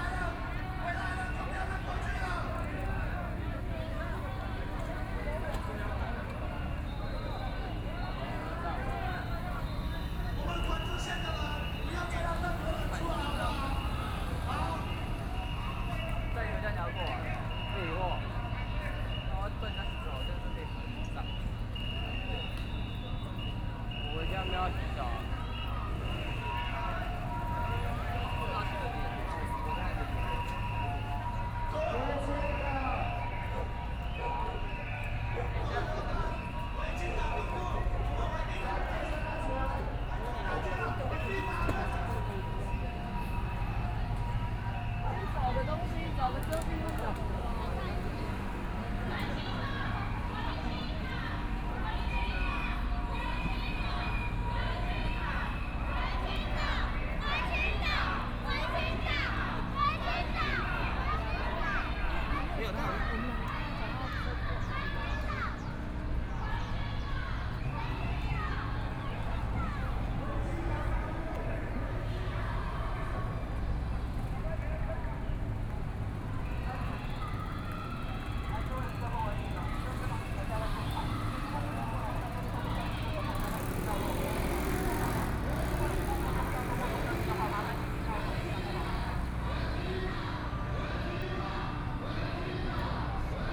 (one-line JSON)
{"title": "Zhongxiao E. Rd., Taipei City - Students and people flee", "date": "2014-03-24 06:36:00", "description": "Riot police in violent protests expelled students, All people with a strong jet of water rushed, Riot police used tear gas to attack people and students, Students and people flee", "latitude": "25.05", "longitude": "121.52", "altitude": "27", "timezone": "Asia/Taipei"}